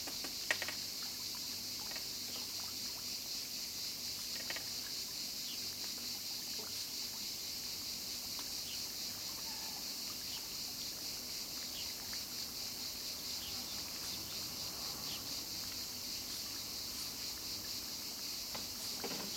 {"title": "Troulos, Greece - poolside ambience", "date": "2022-06-24 07:45:00", "description": "A quiet morning by the pool before too many people are awake. The cicadas are chirping and the hotel puppy has a go at one of the cats. Bliss in the early warm sunshine.", "latitude": "39.14", "longitude": "23.43", "altitude": "17", "timezone": "Europe/Athens"}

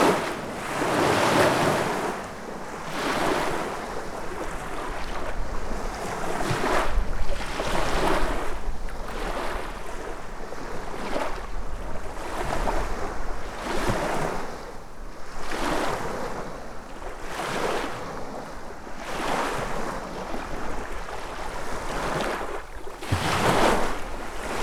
{"title": "Lithuania, Paluse, on a shore", "date": "2012-09-09 13:55:00", "description": "waters' speak always surprise: on a slightly bent lakeshore waves come in blasts", "latitude": "55.33", "longitude": "26.11", "altitude": "147", "timezone": "Europe/Vilnius"}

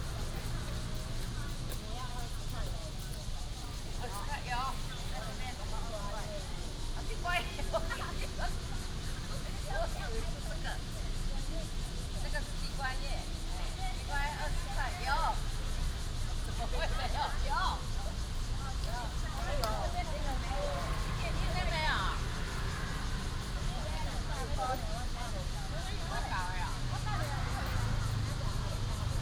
{"title": "宋屋公園, Pingzhen Dist. - Next to the park", "date": "2017-07-28 08:11:00", "description": "Next to the park, Cicada cry, birds sound, traffic sound, Selling vegetables and women", "latitude": "24.95", "longitude": "121.20", "altitude": "151", "timezone": "Asia/Taipei"}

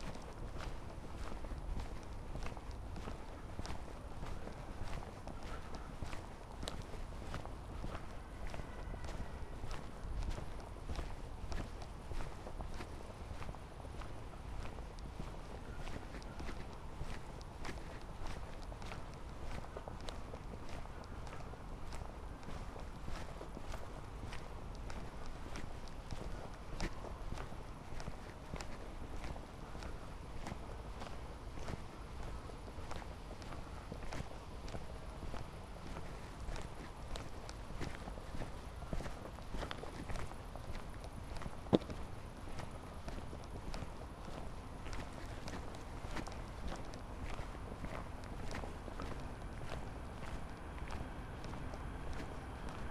{"title": "Berlin Buch, Wolfg.-Heinz-Str. - walking direction river Panke", "date": "2021-09-05 19:40:00", "description": "Berlin Buch, Sunday evening, walking from Wolfgang-Heinz-Str. to river Panke, along a residential project for refugees, a skate park, manholes with water, a playground, and the almost silent river Panke.\n(Sony PCM D50, Primo EM272)", "latitude": "52.63", "longitude": "13.49", "altitude": "53", "timezone": "Europe/Berlin"}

